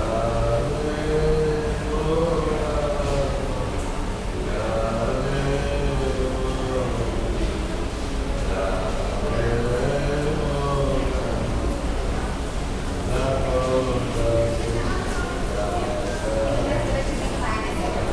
Sofia, Bulgaria, Metro 'Serdika' - Blind busker
Blind man singing in the metro passage. Binaural recording.